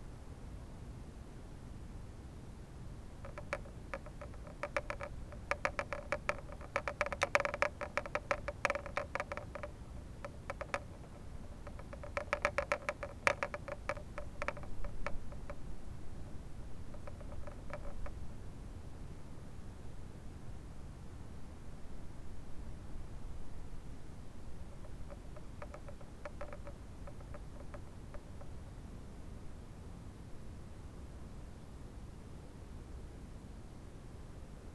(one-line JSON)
{"title": "geesow: salveymühle - the city, the country & me: fence", "date": "2014-01-03 19:58:00", "description": "stormy evening, fence rattling in the wind\nthe city, the country & me: january 3, 2014", "latitude": "53.25", "longitude": "14.36", "timezone": "Europe/Berlin"}